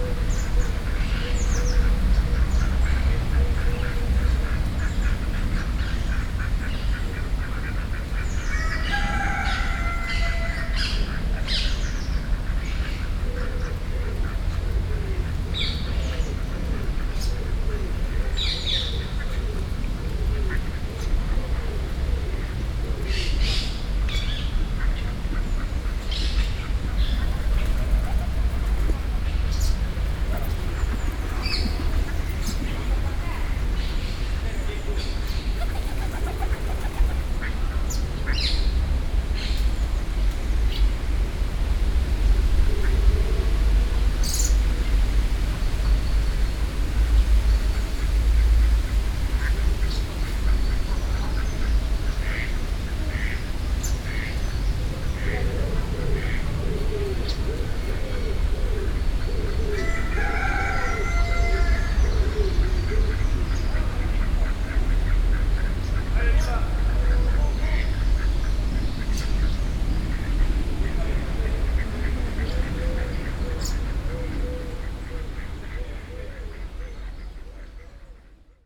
Brussels, Parc Pierre Paulus, Ducks Goose and Jar - Brussels, Parc Pierre Paulus, Ducks, Cock, general ambience
Brussels, Parc Pierre Paulus, Ducks, Cock, general ambience.